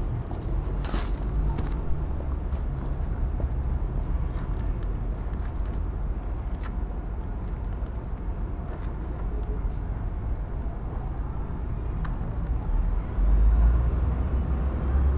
Lithuania, Vilnius, cityscape
a cityscape as heard from the pile of fallen autumn's leaves
Vilnius district municipality, Lithuania, 19 October 2012